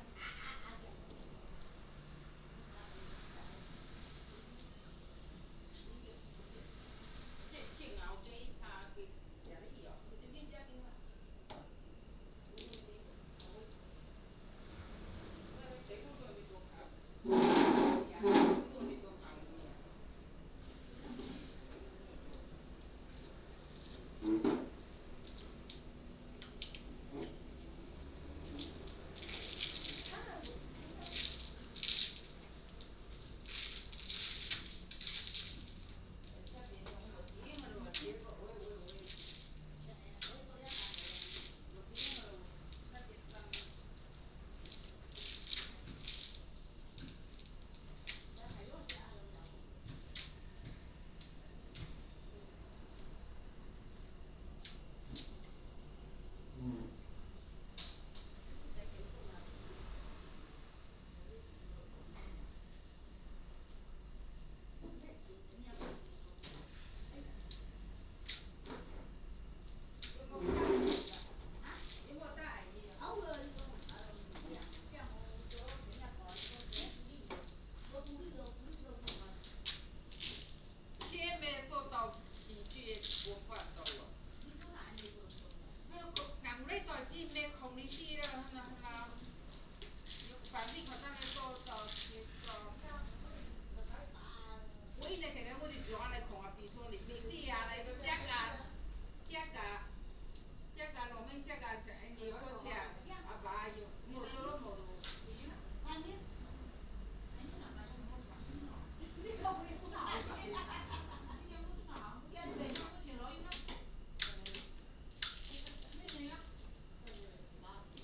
Beigan Township, Taiwan - Small village
Sound of the waves, Elderly, Small village